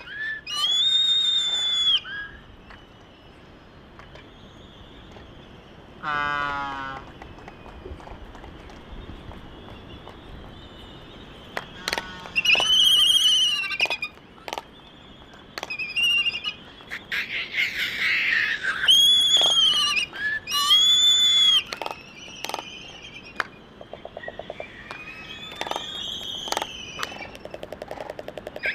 Laysan albatross dancing ... Sand Island ... Midway Atoll ... calls and bill clapperings ... open Sony ECM 959 one point stereo mic to Sony Minidisk ... warm ... sunny ... blustery morning ...
United States, 27 December